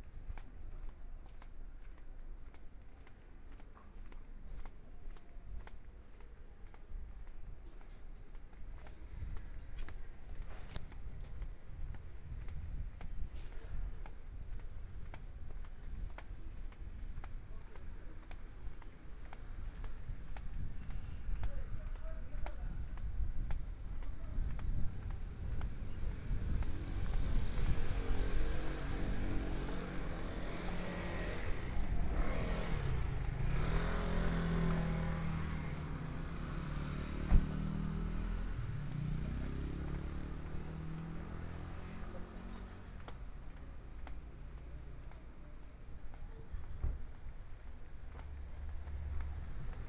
Gangshan Rd., Taipei City - soundwalk
walking on the Road, Traffic Sound, Fireworks and firecrackers
Please turn up the volume
Binaural recordings, Zoom H4n+ Soundman OKM II